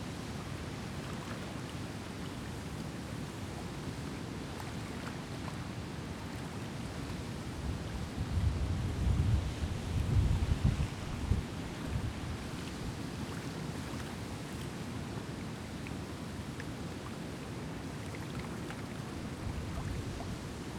Willow River State Park - New Dam - Willow River State Park Dam - Bottom
Recorded at the bottom of the dam near the river. The roar of the dam can be heard to the right and the water lapping against the rocks can be heard directly in front . I hove the recorder a couple times during the recording
Wisconsin, United States, 23 March 2022